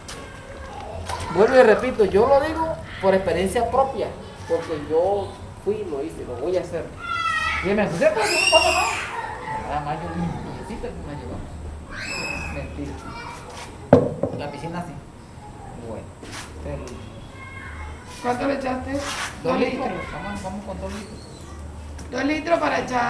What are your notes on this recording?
El maestro artesano Eligio Rojas hace una baño en oro de unas piezas en plata